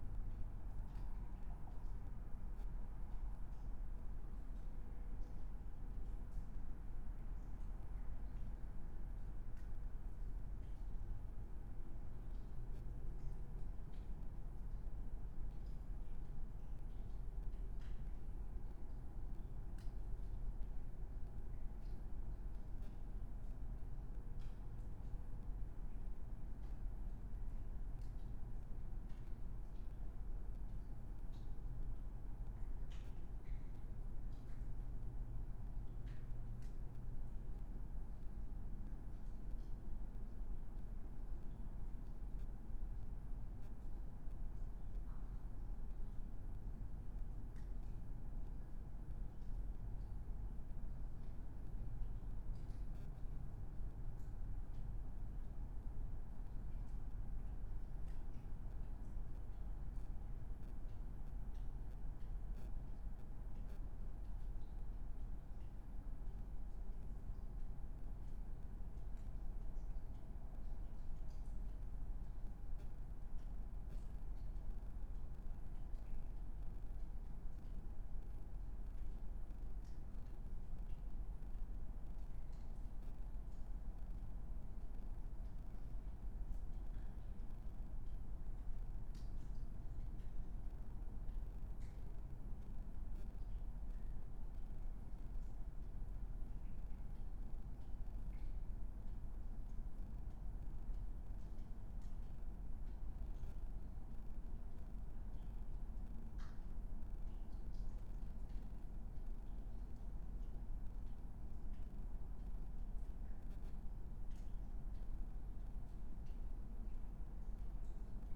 river Traun railway bridge, Linz - under bridge ambience
00:23 river Traun railway bridge, Linz